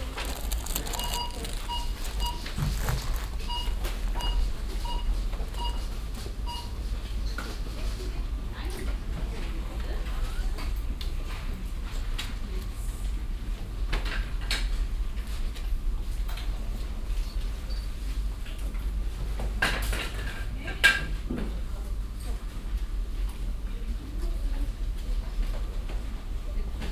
refrath, lustheide, billigmarkt, verkaufsraum
noch nicht auf der aktuellen google map- aber inzwischen errichtet - gebäude einer billigmarktkette - hier eine aufnahme aus dem verkaufsraum
soundmap nrw - social ambiences - sound in public spaces - in & outdoor nearfield recordings